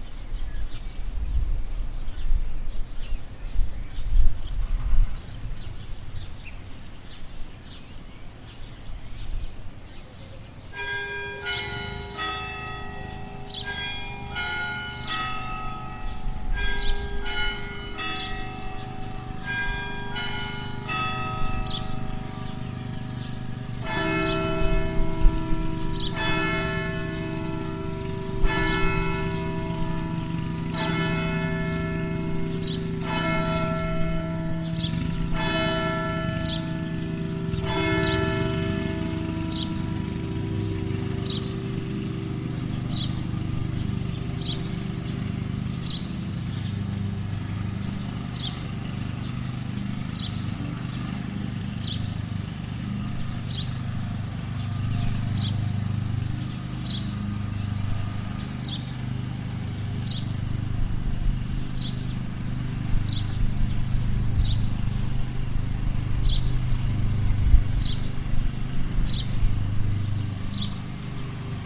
Rencurel, Frankrijk - Bonjour
While standing near the church of Rencurel, a biker is passing by. Church bells are ringing and a local decides to shorten the grass. (Recorded with ZOOM 4HN)
France, 3 July 2013, 17:00